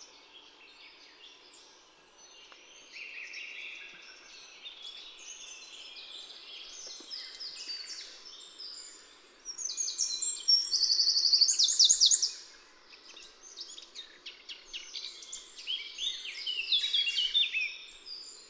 {"title": "Unnamed Road, Flaxieu, France - Bords du Séran avril 1998", "date": "1988-04-24 10:00:00", "description": "Marais de Lavours Bugey\nTascam DAP-1 Micro Télingua, Samplitude 5.1", "latitude": "45.81", "longitude": "5.75", "altitude": "235", "timezone": "GMT+1"}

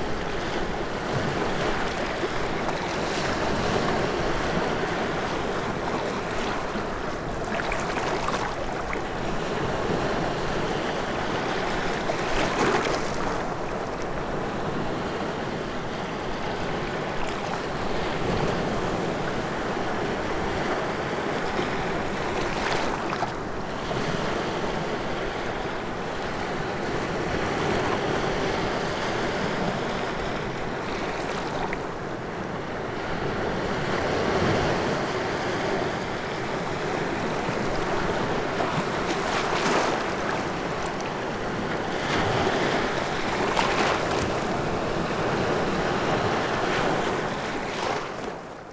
{"title": "Latvia, Kolka, amongst stones", "date": "2012-08-14 16:40:00", "description": "the furthest point between Baltic sea and Riga's bay", "latitude": "57.76", "longitude": "22.60", "timezone": "Europe/Riga"}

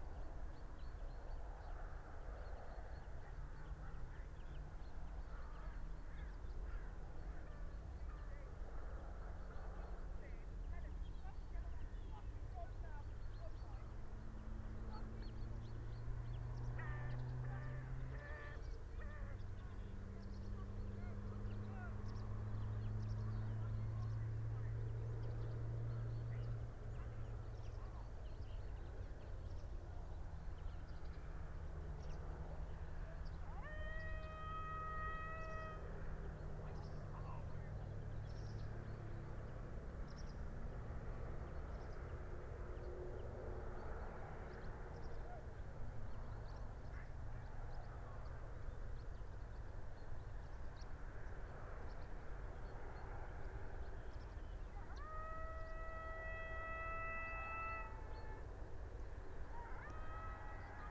{"title": "urchins wood, ryedale district ... - horses and hounds ...", "date": "2019-09-30 07:58:00", "description": "horses and hounds ... parabolic ... bird calls ... goldfinch ... dunnock ... red-legged partridge ... crow ... pied wagtail ... meadow pipit ... and although distant ... some swearing ...", "latitude": "54.12", "longitude": "-0.56", "altitude": "118", "timezone": "Europe/London"}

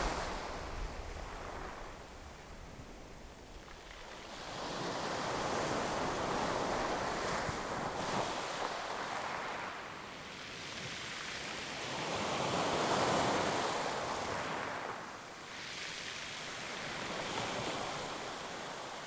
{"title": "Agiofaraggo Canyon Footpath, Festos, Greece - Waves on pebbles in Agiofarago", "date": "2017-08-16 22:55:00", "description": "The interaction of the water with the pebbles has been captured in this recording.", "latitude": "34.93", "longitude": "24.78", "altitude": "12", "timezone": "Europe/Athens"}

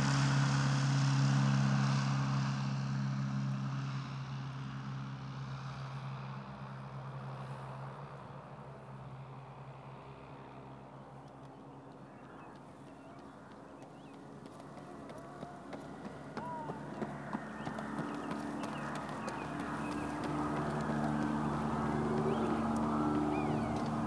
{"title": "Segelfluggelände Kirchheim/Teck-Hahnweide, Kirchheim unter Teck, Deutschland - Hahnweide 140319", "date": "2014-03-19 17:00:00", "description": "Sports airplane starting and landing", "latitude": "48.63", "longitude": "9.43", "altitude": "355", "timezone": "Europe/Berlin"}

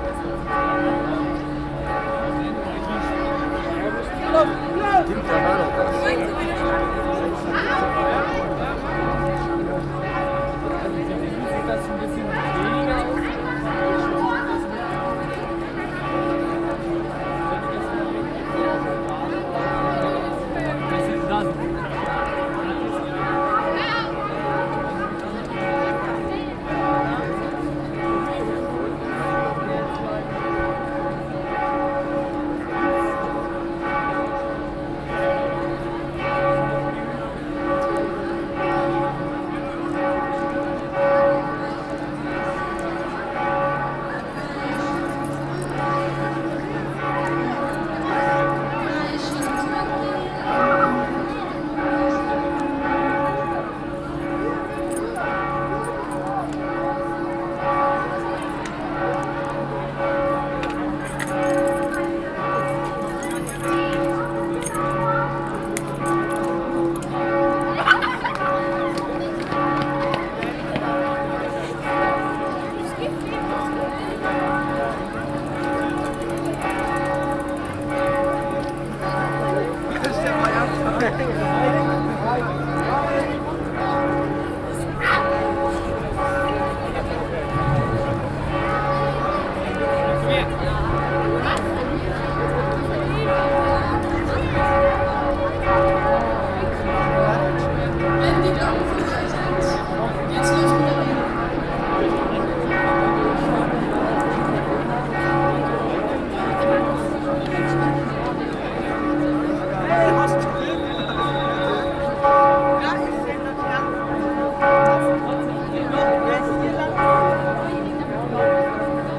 {"title": "at the city church, Marktpl., Hamm, Germany - Fridays For Future 20 September 2019", "date": "2019-09-20 11:50:00", "description": "local sounds of global demonstrations, “Alle fuers Klima”; noon bells of the city church when the demonstration of a record 2000 striking pupils, friends and parents reaches the market for the speeches …\nsee also\nlocal paper 20.09.19", "latitude": "51.68", "longitude": "7.82", "altitude": "65", "timezone": "Europe/Berlin"}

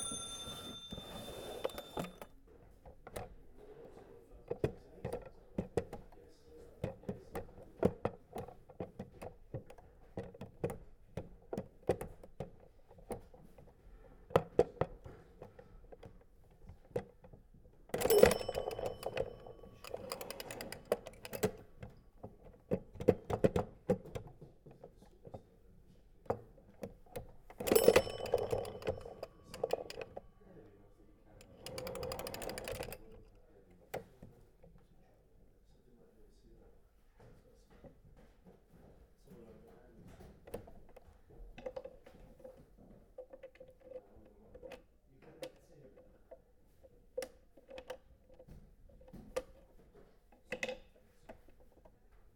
{"title": "Jacksons of Reading, Reading, UK - closeup recording of the vintage tills", "date": "2014-01-03 17:01:00", "description": "This is similar to the earlier recording from the same day, but the bells have been recorded much more closely by placing a recorder insider the wooden till.", "latitude": "51.46", "longitude": "-0.97", "altitude": "45", "timezone": "Europe/London"}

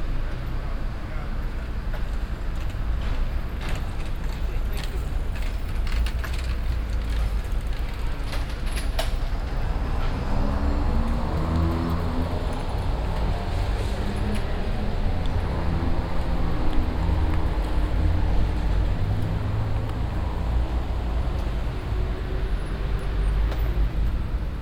{"title": "amsterdam, prinsengracht, street traffic", "date": "2010-07-11 13:10:00", "description": "street traffic in the morning at a small one way street nearby the channel. bicycles, motorbikes, cars, lorries and passengers\ncity scapes international - social ambiences and topographic field recordings", "latitude": "52.37", "longitude": "4.88", "altitude": "-1", "timezone": "Europe/Amsterdam"}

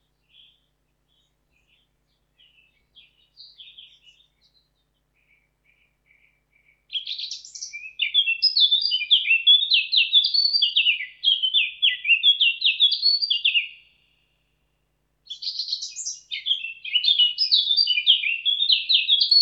{"title": "Lokovec, Čepovan, Slovenia EXCITING BIRDS DISCUSSION - EXCITING BIRDS DISCUSSION (Exciting Birds Voices)", "date": "2018-06-23 17:06:00", "description": "Walking through the forest, just in that time, some exciting birds started to have a hot discussion. Bird Singing with hot loud voices during the hot summer day.\nZOOM H4n PRO\nBinaural Microphones", "latitude": "46.05", "longitude": "13.77", "altitude": "928", "timezone": "Europe/Ljubljana"}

